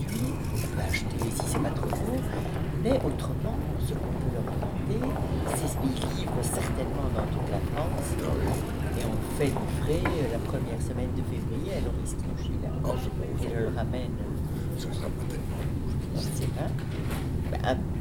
Brussels, Midi Station, on the way to Paris